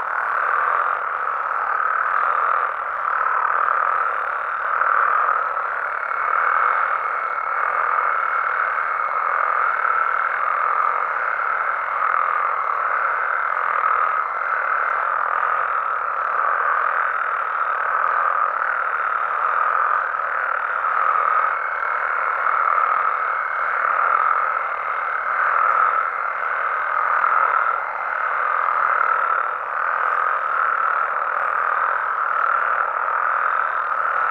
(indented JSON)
{
  "title": "Lavaderos, San Luis Potosi, Desert, Mexico - Toads and frogs during the night in the desert (San Luis Potosi, Mexico)",
  "date": "2019-06-20 23:00:00",
  "description": "During the night close to a pound in the small community of Lavaderos (Desert of San Luis Potosi, Mexico), some toads and frogs are singing, happy to had some rain during the day.... after a few months very dry.\nRecorded by a AB setup with 2 B&K 4006 Microphones\nOn a Sound Devices 633 recorder\nSound Ref MXF190620T15\nGPS 23.592193 -101.114010\nRecorded during the project \"Desert's Light\" by Félix Blume & Pierre Costard in June 2019",
  "latitude": "23.59",
  "longitude": "-101.11",
  "altitude": "1886",
  "timezone": "America/Mexico_City"
}